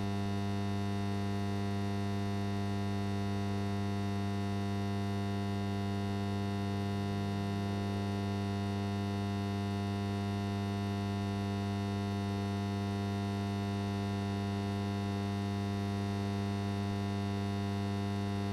Lithuania, Utena, electrical transformer
droning in the fields